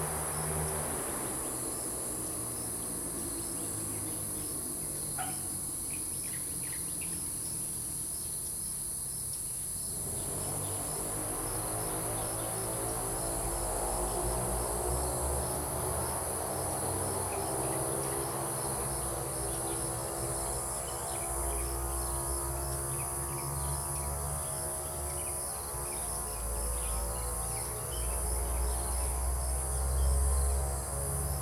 組合屋生態池, 埔里鎮桃米里 - Birds singing
Birds singing, Traffic Sound, Ecological pool
Zoom H2n MS+XY